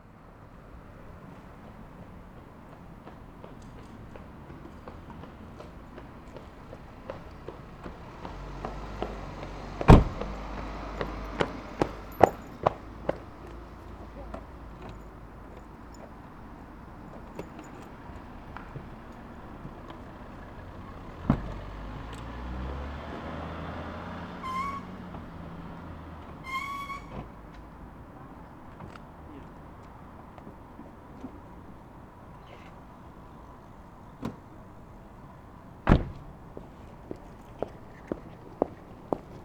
Berlin: Vermessungspunkt Friedelstraße / Maybachufer - Klangvermessung Kreuzkölln ::: 06.09.2010 ::: 00:47